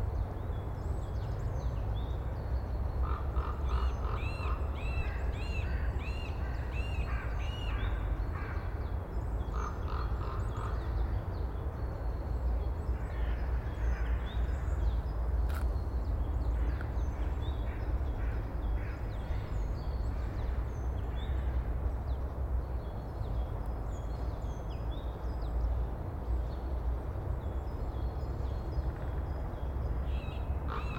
Tartu linna asutus Kalmistu, Kalmistu, Tartu, Estonia - Crows and Ravens in raveyard
Crows are chasing ravens in Tartu Raadi graveyard. ORTF 2xMKH8040